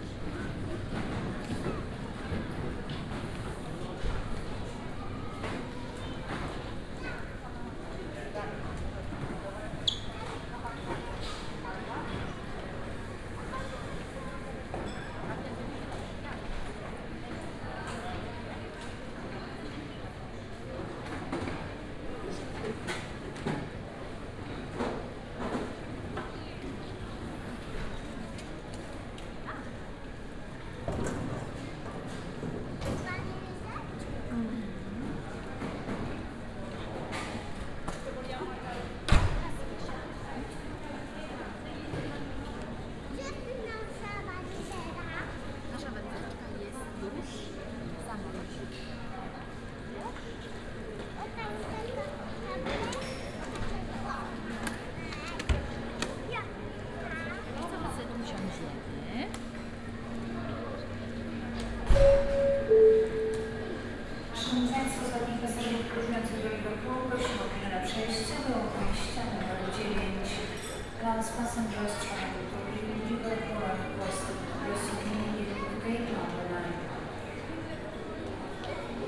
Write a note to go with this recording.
(binaural recording), walking around the recently built departure hall. first approaching a cafe with a snack refrigerator which is oozing its buzz around the terminal. then making my way towards security area with ringing machinery, tumbling crates and impatient conversations.